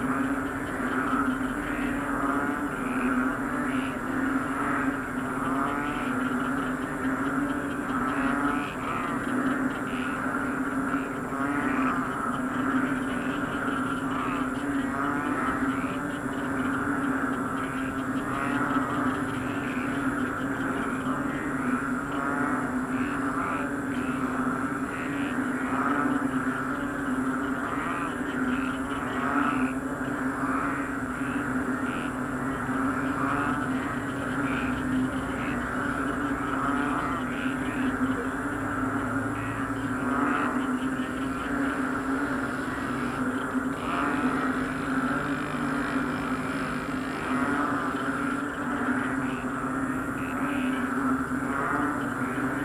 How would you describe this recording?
After som heavy rain in the evening before, there is full activity of the frogs in the field nearby! I belive several hundreds of them in chorus. Palakang bukid is the filipino name of this frog.